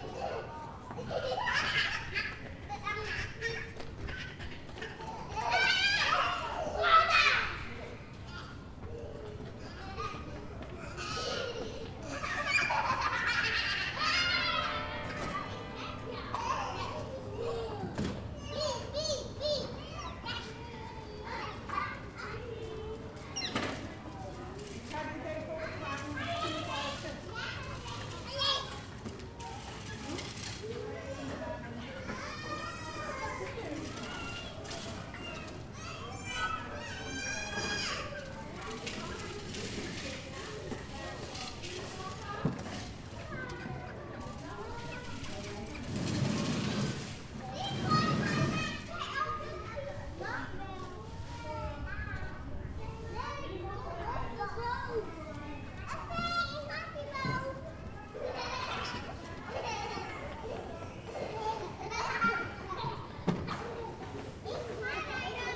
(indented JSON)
{
  "title": "Kortenbos, Den Haag, Nederland - End of the day at the daycare",
  "date": "2013-05-06 17:00:00",
  "description": "Parents collect their children at the daycare.\nRecorded with Zoom H2 internal mice. Some slight wind.",
  "latitude": "52.08",
  "longitude": "4.31",
  "altitude": "8",
  "timezone": "Europe/Amsterdam"
}